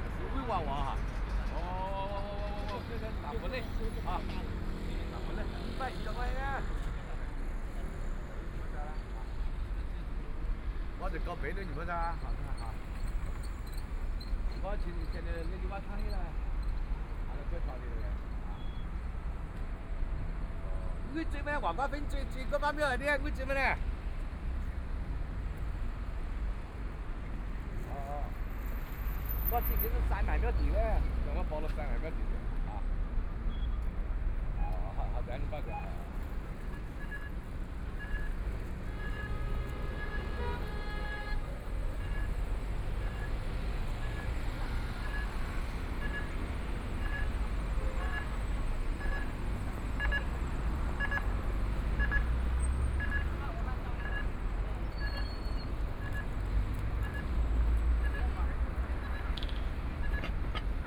Dongcheng Rd., Pudong New Area - At intersection
Traffic signal sounds, Binaural recording, Zoom H6+ Soundman OKM II
Shanghai, China, 21 November 2013